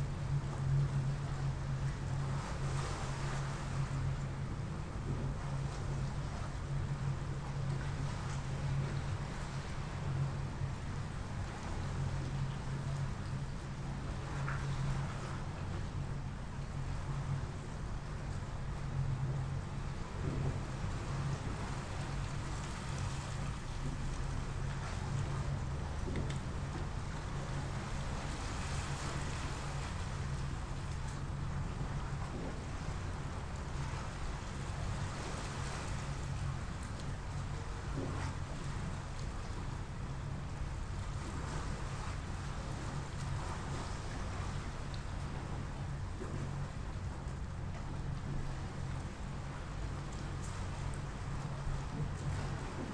Giorgioupolis, Crete, inside the church
inside the church in the sea
Greece, 3 May 2019